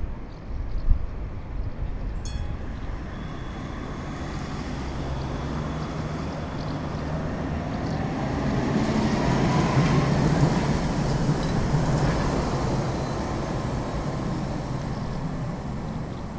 rašínovo nábřeží, House martins feeding
House martins feeding at the heavy trafic at Výtoň. Just the day of the 150th anniversary of birthday of Gustav Mahler.The Botič creek estuary is just few meters from here, and perhaps good resource of insect.
7 July 2010, ~20:00